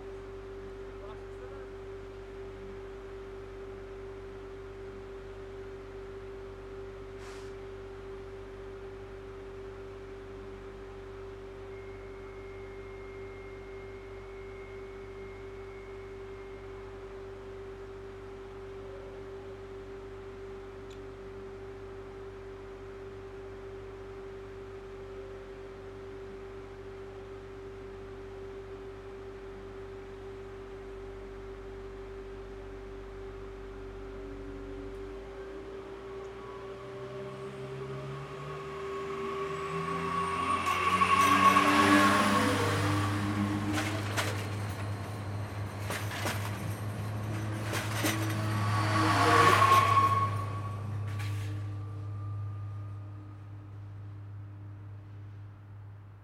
Rue Roger Lejeune, Tournai, Belgium - Gare de Froyennes
Froyennes train station, train departure during summer, almost no people. Recorded with a Zoom H5 and the XY-H5 microphone
Wallonie, België / Belgique / Belgien, 7 August 2020